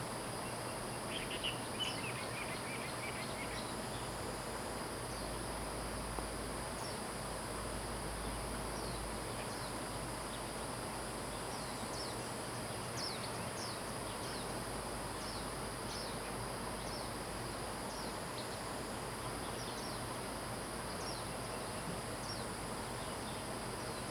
桃米巷, 埔里鎮桃米里 - Bird calls
In the morning, Bird calls, The sound of water streams
Zoom H2n MS+XY